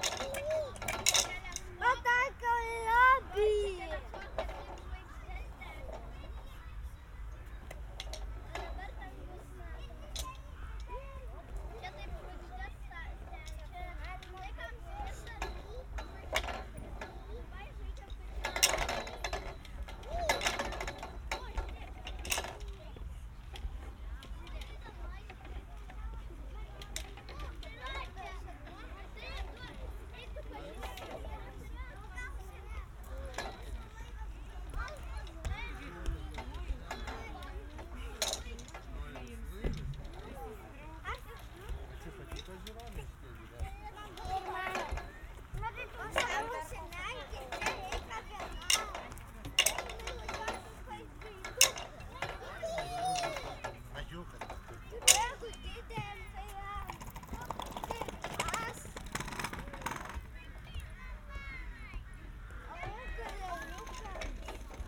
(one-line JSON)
{"title": "Utena, Lithuania, another kids playground", "date": "2021-05-16 16:20:00", "description": "Quarantine is still in action in Lithuania, however people are people and kids playgrounds are full of kids. Sennheiser ambeo headset recording.", "latitude": "55.50", "longitude": "25.60", "altitude": "102", "timezone": "Europe/Vilnius"}